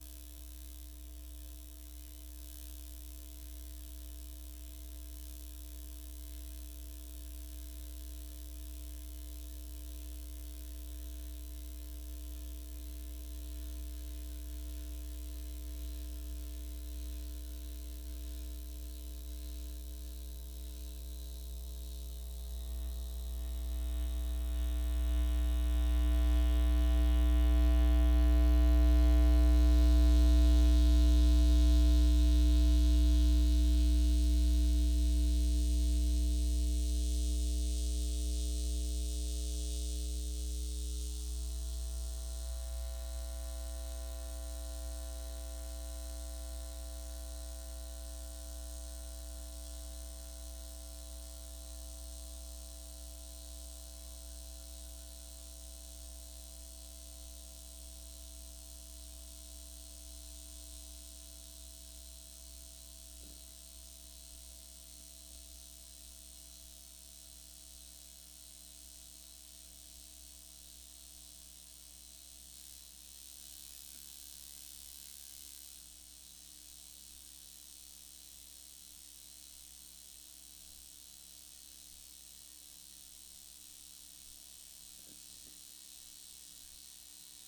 Recording using coil pick-up microphone of short walk under power line. Sound increases directly under the powerline and again near the bridge over floodgate.
6 December 2020, ~16:00